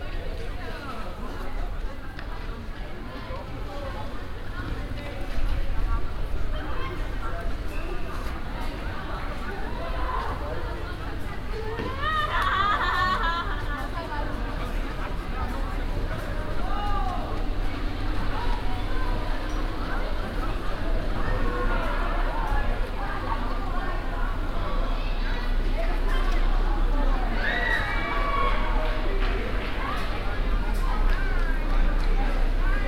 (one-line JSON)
{"title": "cologne, dagobertstr, at catholic elementary school - cologne, dagobertstr, catholic elementary school", "date": "2009-06-19 12:42:00", "description": "break time in the morning, kids playing soccer and joking around, cars passing by\nsoundmap d: social ambiences/ listen to the people - in & outdoor nearfield recordings", "latitude": "50.95", "longitude": "6.96", "altitude": "53", "timezone": "Europe/Berlin"}